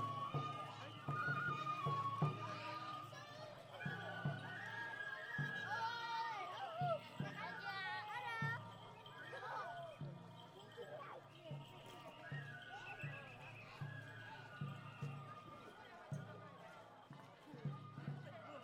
Walking from the covered shopping arcade into the festival and back again.
福岡県, 日本, 19 May 2018, ~12:00